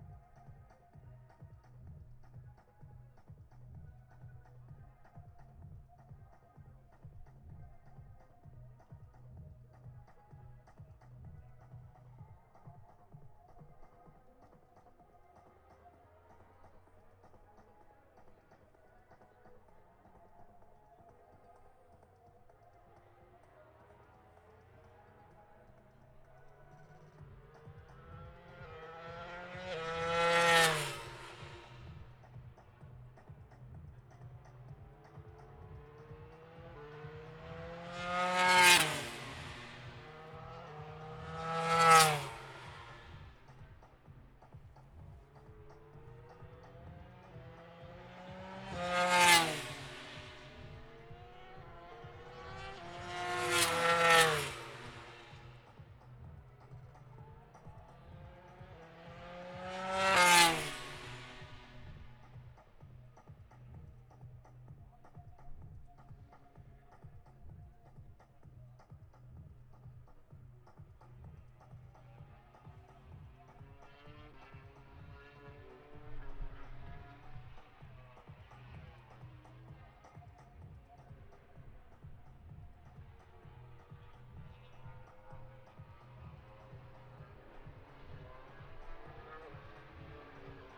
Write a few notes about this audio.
british motorcycle grand prix 2022 ... moto grand prix free practice three ... zoom h4n pro integral mics ... on mini tripod ... plus disco ...